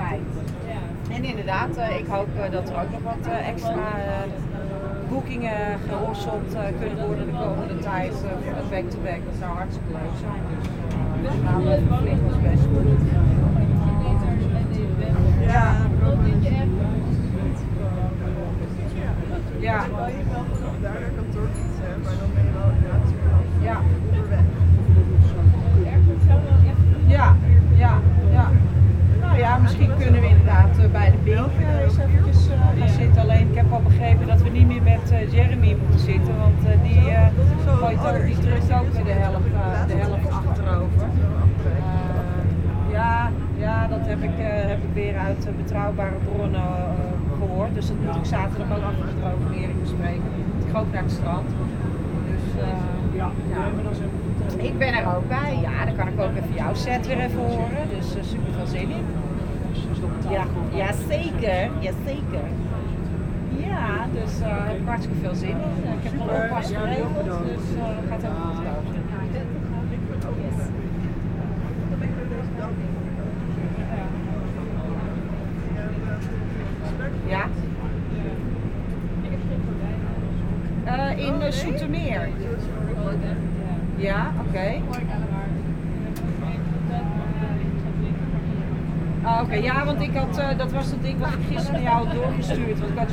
Het Ij, Veer Buiksloterweg. Crossing the river using the ferry. A person is phoning just near, with a strong voice.
28 March 2019, ~17:00